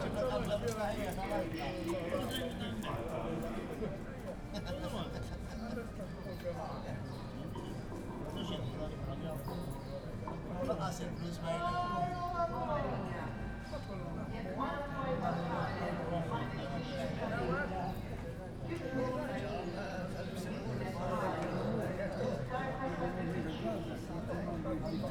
Misraħ ir-Repubblika, Żejtun, Malta - square ambience during procession
Misraħ ir-Repubblika, Zejtun, old men sitting on benches in front of Zejtun Band Club talking, ambience of square during a procession
(SD702, DPA4060)
7 April, ~19:00